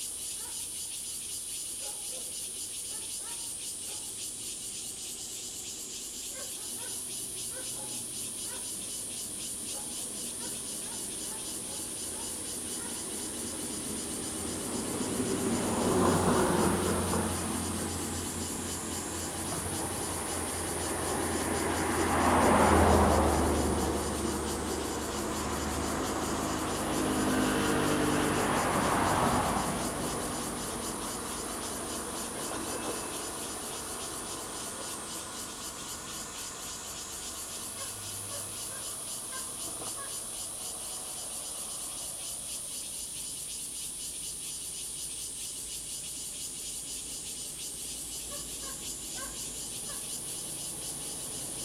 Wenquan Rd., Jhiben - In the roadside
Cicadas, Traffic Sound, Dogs barking
Zoom H2n MS +XY